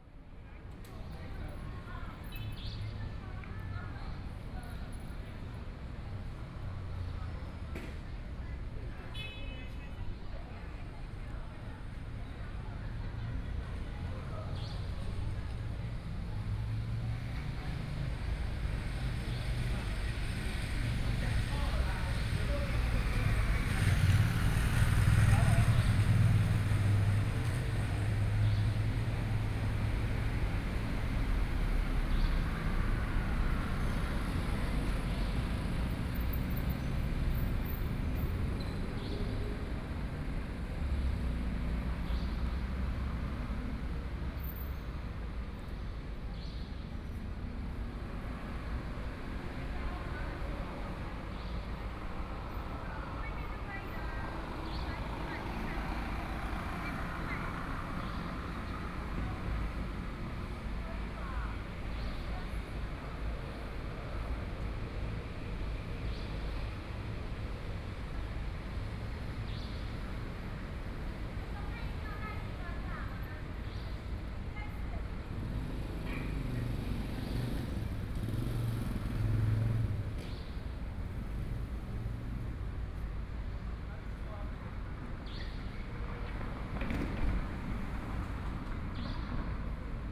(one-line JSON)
{"title": "鹽埕區光明里, Kaoshiung City - The plaza at night", "date": "2014-05-13 20:57:00", "description": "The plaza at night, Traffic Sound, Birds singing", "latitude": "22.62", "longitude": "120.28", "altitude": "11", "timezone": "Asia/Taipei"}